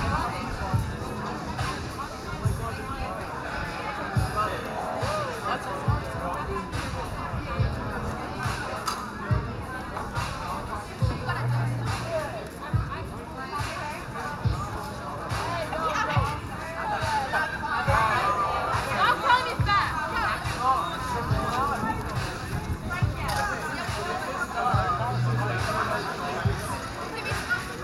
{"title": "Boul. Saint-Laurent, Montréal, QC, Canada - Noisy street, loud car and music bar, evening", "date": "2021-08-18 20:20:00", "description": "St Laurent St, Zoom MH-6 and Nw-410 Stereo XY", "latitude": "45.52", "longitude": "-73.59", "altitude": "67", "timezone": "America/Toronto"}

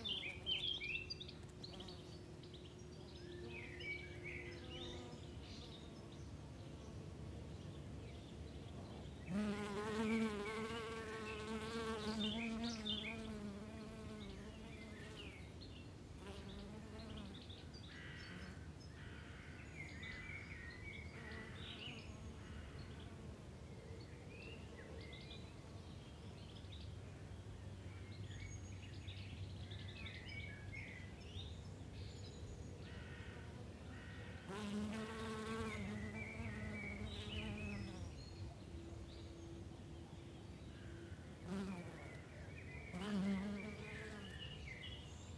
{"title": "Tivoli, Colle Castello, The birds and the bees", "date": "2011-09-14 12:03:00", "description": "Colle Castello: casa dolce casa, le api e gli uccelli...\nThe birds and the bees, summer.\nLoop.", "latitude": "41.95", "longitude": "12.84", "altitude": "284", "timezone": "Europe/Rome"}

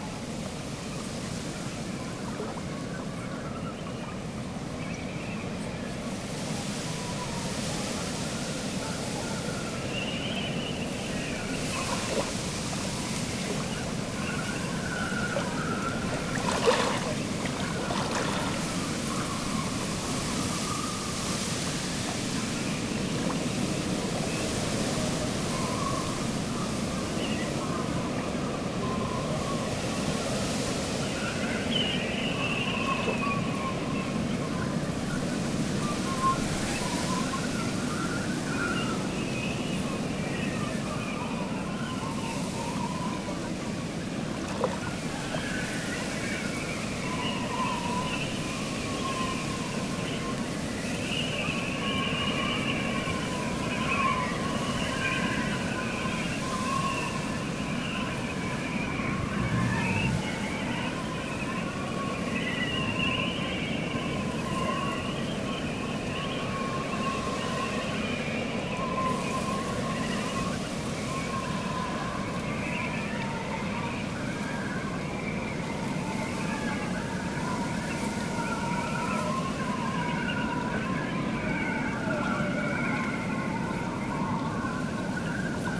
{"title": "workum, het zool: canal bank - the city, the country & me: canal bank, stormy weather", "date": "2015-06-13 17:59:00", "description": "stormy late afternoon, wind whistles through the rigging of ships\nthe city, the country & me: june 13, 2015", "latitude": "52.97", "longitude": "5.42", "timezone": "Europe/Amsterdam"}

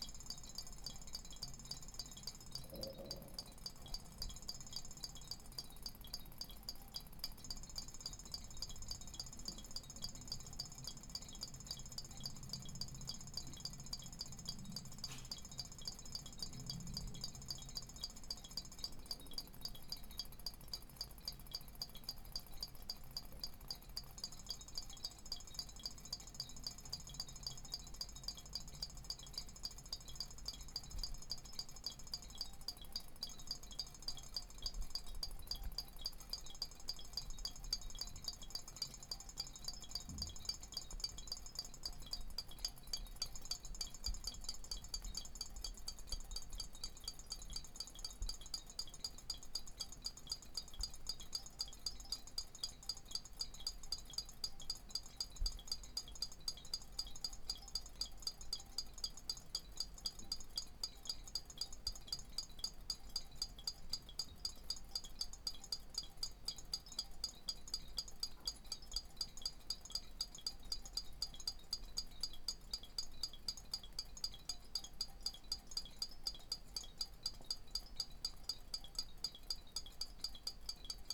{"title": "Poznan, Mateckiego street, kitchen - champagne glass orchestra", "date": "2013-01-01 13:16:00", "description": "a set of dozen or so champagne glasses, set on a drying rack, drying after washed with hot water. air bubbles making bell like sounds all over the place", "latitude": "52.46", "longitude": "16.90", "altitude": "97", "timezone": "Europe/Warsaw"}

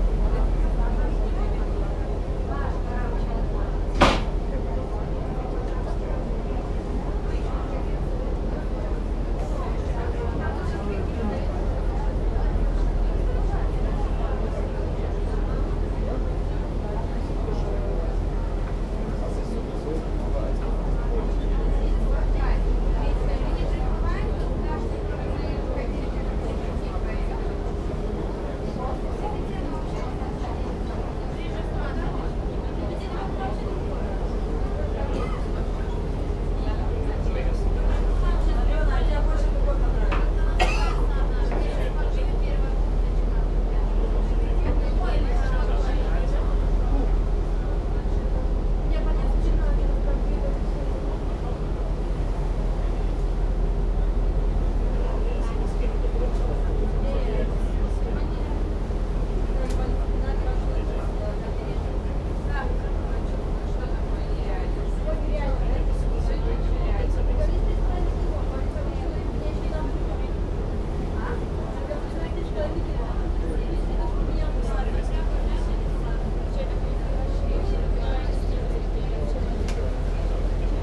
{"title": "South of Crete, Libyan Sea - ferry to Sfakion", "date": "2012-09-29 18:24:00", "description": "ferry ride to Sfakion. talks of the passengers limited by the hum of engines.", "latitude": "35.19", "longitude": "24.01", "timezone": "Europe/Athens"}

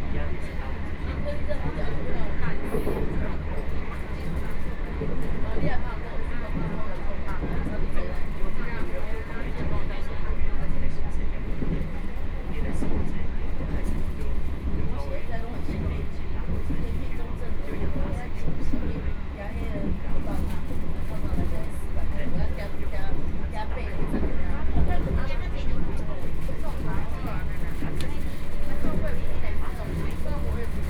{"title": "鎮安村, Linbian Township - Chu-Kuang Express", "date": "2014-09-04 10:33:00", "description": "Chu-Kuang Express, fromZhen'an Station to Linbian Station", "latitude": "22.45", "longitude": "120.51", "altitude": "1", "timezone": "Asia/Taipei"}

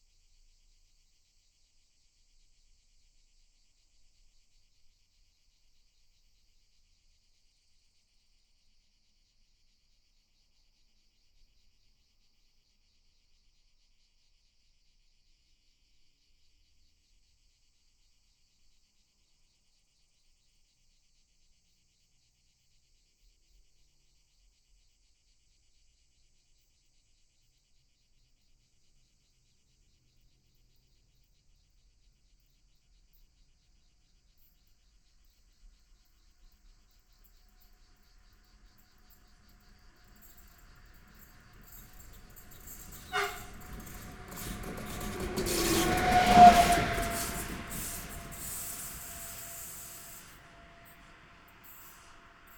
{"title": "Črnotiče, Črni Kal, Slovenia - Cargo train", "date": "2020-07-10 10:02:00", "description": "Cargo train going up the hill and later one locomotive going down. Recorded with Lom Usi Pro.", "latitude": "45.55", "longitude": "13.89", "altitude": "378", "timezone": "Europe/Ljubljana"}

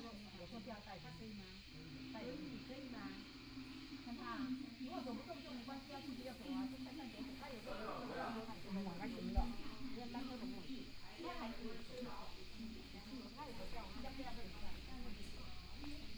{"title": "獅頭山商店, Emei Township, Hsinchu County - Vintage shop", "date": "2017-11-01 11:55:00", "description": "Vintage shop, Cicadas sound, r, Traffic sound, Tourists chatting under the tree, Binaural recordings, Sony PCM D100+ Soundman OKM II", "latitude": "24.66", "longitude": "121.02", "altitude": "150", "timezone": "Asia/Taipei"}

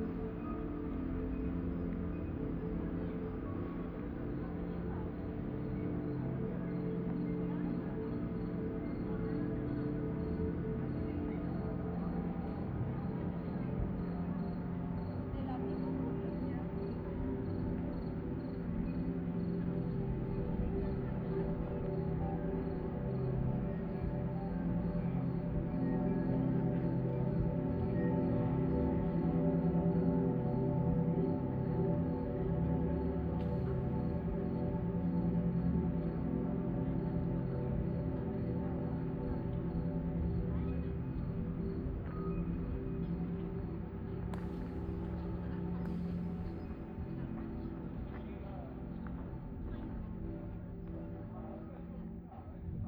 {"title": "Cetatuia Park, Klausenburg, Rumänien - Cluj, Cetatuia, Fortess Hill project, rocket lift off", "date": "2014-05-25 23:00:00", "description": "At the monument of Cetatuia. A recording of the multi channel night - lift off composition of the temporary sound and light installation project Fortress Hill. phase 1 - awakening of the mountain - phase 2 - shepard spiral scale - phase 3 - rocket lift off - phase 4 - going into space - phase 5 - listening through the spheres (excerpt) - total duration: 60 min.\nNote the roof of the monument rattling and resonating with the sound waves.\n- headphone listening recommeded.\nSoundmap Fortress Hill//: Cetatuia - topographic field recordings, sound art installations and social ambiences", "latitude": "46.77", "longitude": "23.58", "altitude": "396", "timezone": "Europe/Bucharest"}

